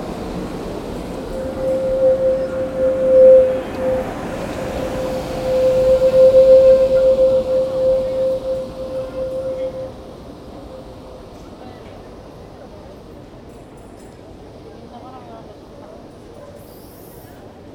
Antwerpen, Belgique - Tramway
On a tight curve, two tramways circulate into the Groenplaats station.
Antwerpen, Belgium, August 2018